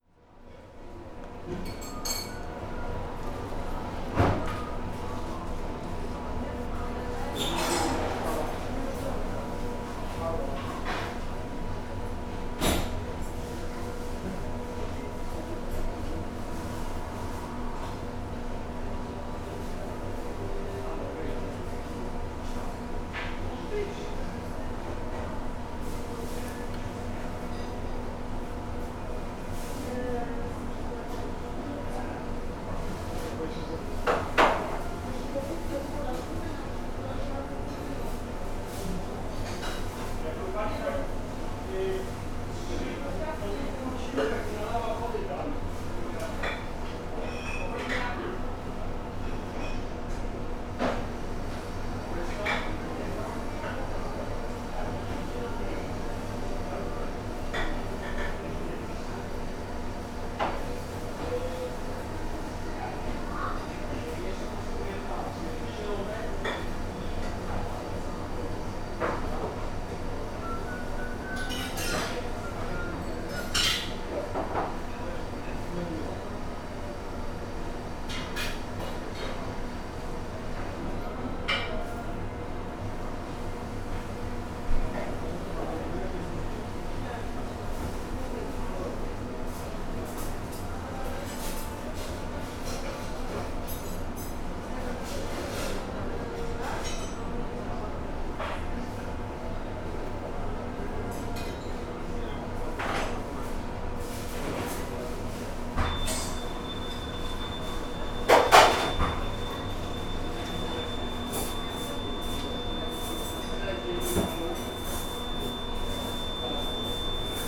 Jaroczynskiego, Poznan - changing room
ambience of a restaurant recorded in the staff changing room. staff conversations, AC hum, cutlery rattle, moving about cooking utensils in the kitchen, beep of the convection oven. the restaurant wasn't very busy at the moment (sony d50 internal mics)